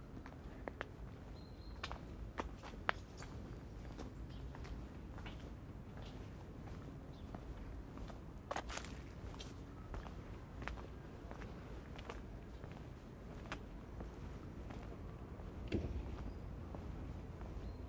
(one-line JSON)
{"title": "Alte Jakobstraße, Berlin, Germany - Stones, gravel and more explorations of musical bike stands with my hand – railings too", "date": "2020-11-07 15:16:00", "description": "The cranes on the Google map are no longer there, but these are very new apartments. People are still moving in. The sounds of work inside still continues. The buildings surround a long narrow garden full of exotic plants and areas of different surfaces – gravel, small stones, sand – for walking and for kids to play. Perhaps this is Berlin's most up-to-date Hinterhof. There is 'green' design in all directions, except perhaps underfoot - surely grass would be nicer than so much paving. The many bike stands are all metal that ring beautifully when hit by hand. Together with the resonant railings they are an accidental musical instrument just waiting to be played.", "latitude": "52.51", "longitude": "13.41", "altitude": "38", "timezone": "Europe/Berlin"}